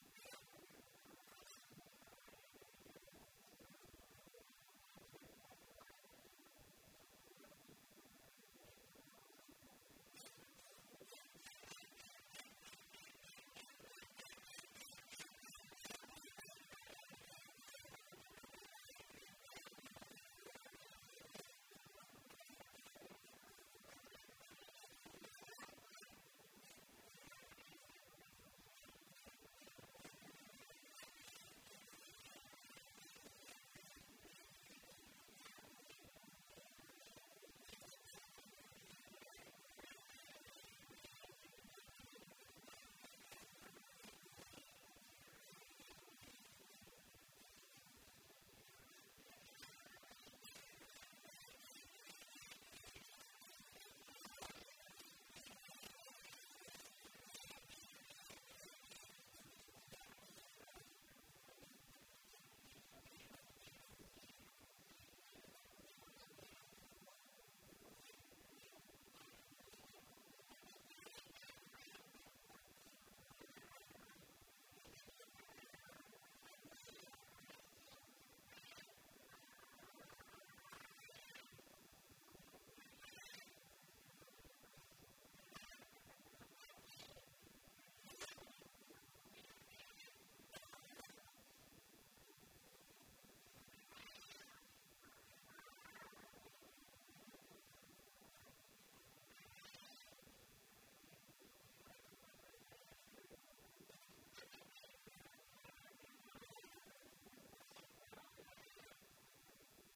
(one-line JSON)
{"title": "Mumbai, Elephanta Island, fighting monkeys", "date": "2011-03-13 15:58:00", "description": "India, maharashtra, Mumbai, Elephanta Island, Monkey, Elephanta Island (also called Gharapuri Island or place of caves) is one of a number of islands in Mumbai Harbour, east of Mumbai, India. This island is a popular tourist destination for a day trip because of the islands cave temples, the Elephanta Caves, that have been carved out of rock.", "latitude": "18.96", "longitude": "72.93", "altitude": "68", "timezone": "Asia/Kolkata"}